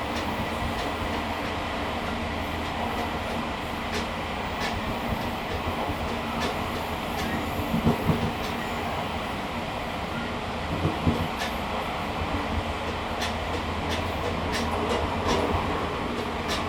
內獅村, Shihzih Township - Chu-Kuang Express
Chu-Kuang Express, Train in the mountains, Inside the train
Zoom H2n MS+XY
4 September 2014, 11:12